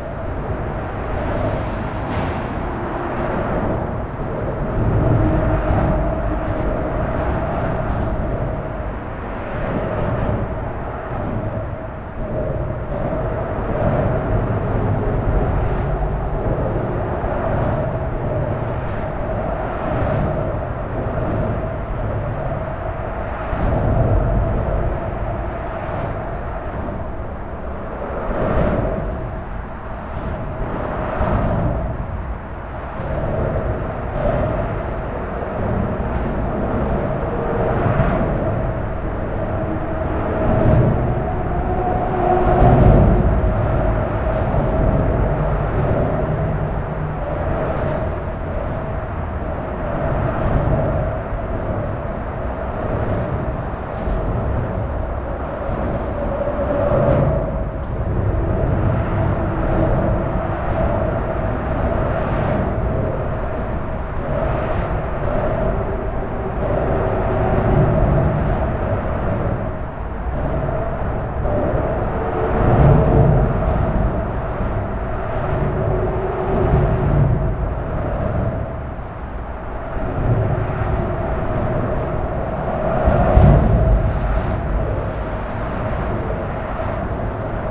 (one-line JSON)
{"title": "berlin, bundesplatz: unter autobahnbrücke - the city, the country & me: under motorway bridge", "date": "2013-04-10 11:42:00", "description": "strange and unfriendly place: unlighted parking under motorway bridge, suburban train arrives at the station close to the bridge\nthe city, the country & me: april 10, 2013", "latitude": "52.48", "longitude": "13.33", "altitude": "43", "timezone": "Europe/Berlin"}